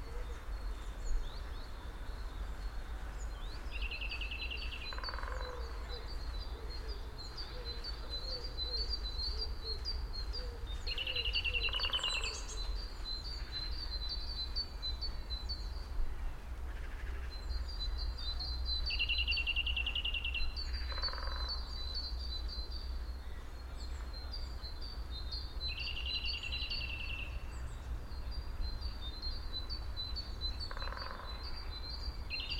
Binaural recording of a feint sign of Spring 2021 in a Park in Germany. A Woodpecker can be heard in the right channel. There is a perception of height with the subtle calls of other birds. Date: 20.02.2021.
Recording technology: BEN- Binaural Encoding Node built with LOM MikroUsi Pro (XLR version) and Zoom F4.